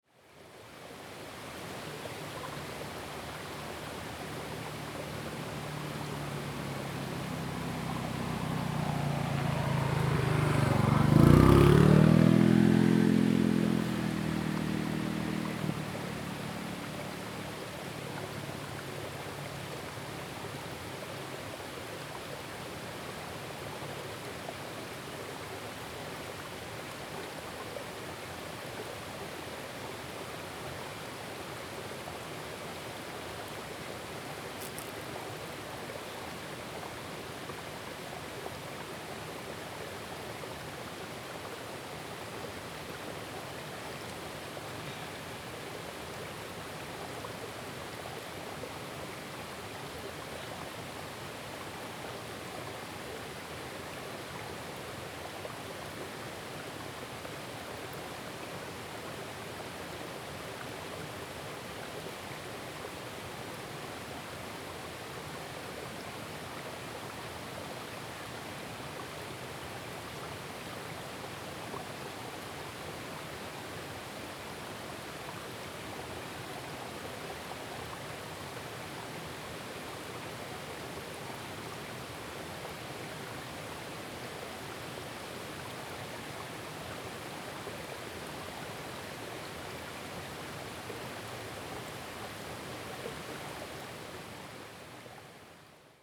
種瓜路, 埔里鎮桃米里, Taiwan - sewer
sewer, stream sound, traffic sound
Zoom H2n MS+ XY
Nantou County, Taiwan, 21 April 2016, ~12:00